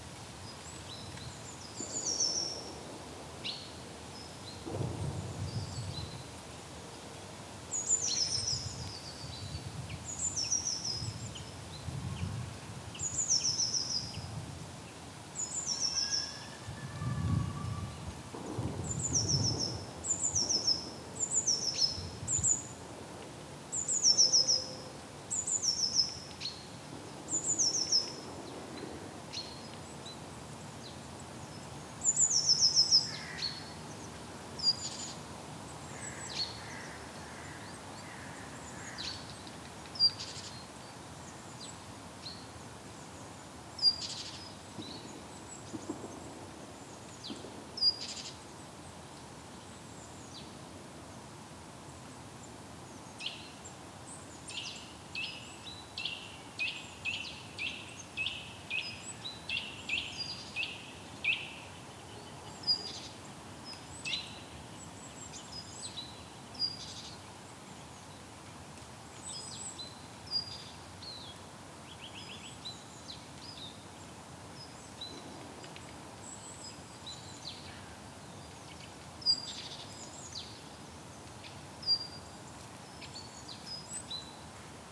Rixensart, Belgique - Winter into the forest
During the winter, there's very few sounds in the Belgian forest. Birds are dumb. Here, we can hear a brave Great tit, a courageous Common chaffinch and some distant clay pigeon shooting. Nothing else, it's noiseless, but spring is coming up.